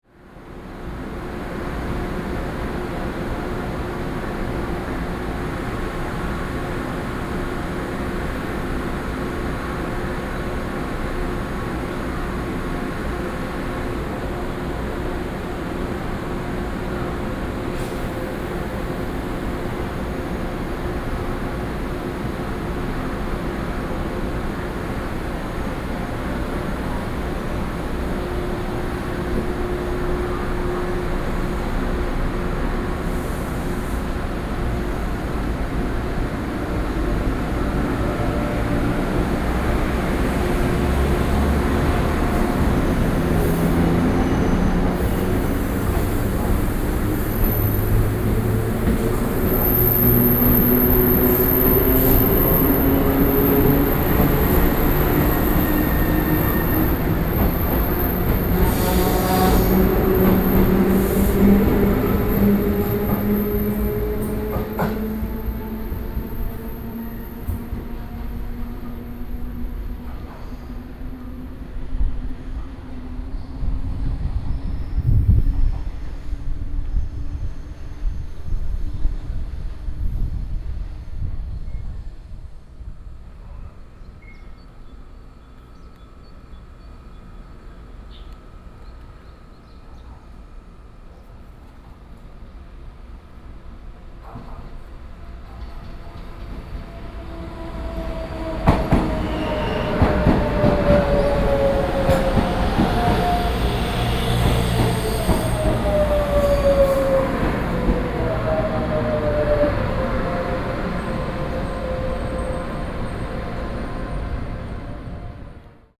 瑞芳車站, New Taipei City - In the train station platform
In the train station platform, Traveling by train, Train arrival platform
Zoom H4n+ Soundman OKM II
Ruifang District, New Taipei City, Taiwan, 29 June 2012